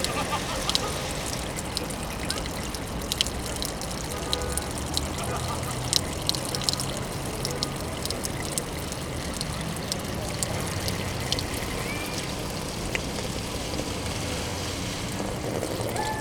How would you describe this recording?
Fontaine Wallace, eau potable, boulevard Richard Lenoir - Paris